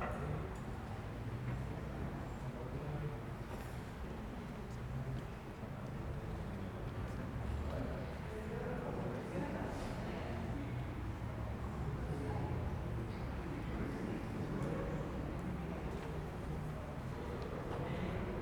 Northcote, Auckland, New Zealand - St. Mary's Catholic Church Before Mass
This is recording just 10 to 15 mins before mass starts where people are just walking in, they had a special gong that day I wish I could've recorded it.